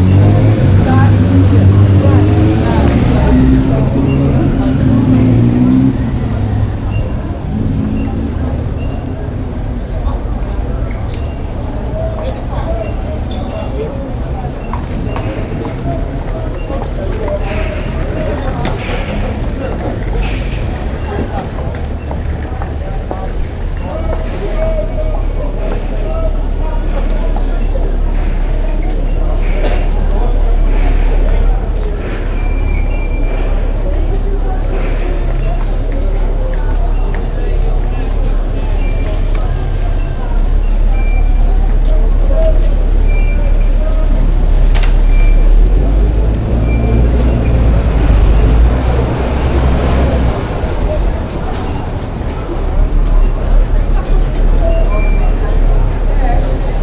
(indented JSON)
{
  "title": "London, UK - Outside Dalston Kingsland station, 18 June 2016",
  "date": "2016-06-18 15:15:00",
  "latitude": "51.55",
  "longitude": "-0.08",
  "altitude": "22",
  "timezone": "Europe/London"
}